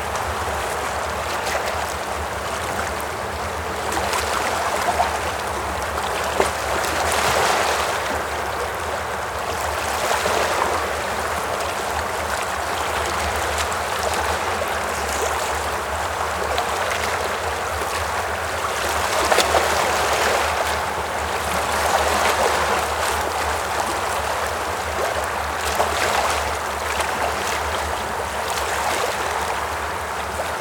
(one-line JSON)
{"title": "The Netherlands - Ketelmeer: melting ice", "date": "2013-01-27 14:40:00", "latitude": "52.62", "longitude": "5.65", "altitude": "2", "timezone": "Europe/Amsterdam"}